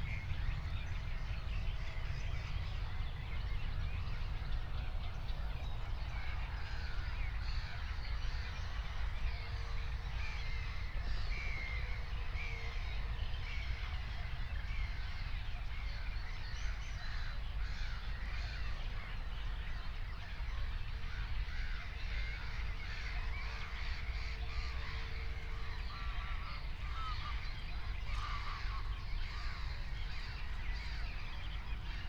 04:00 Berlin, Buch, Moorlinse - pond, wetland ambience
Deutschland